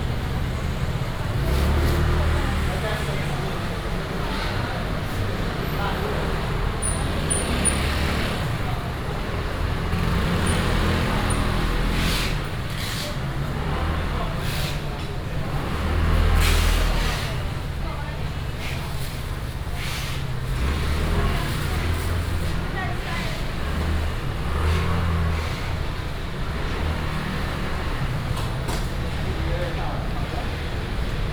新竹果菜批發市場, Hsinchu City - Vegetables and fruit wholesale market
walking in the Vegetables and fruit wholesale market, traffic sound, Binaural recordings, Sony PCM D100+ Soundman OKM II
15 September 2017, 05:08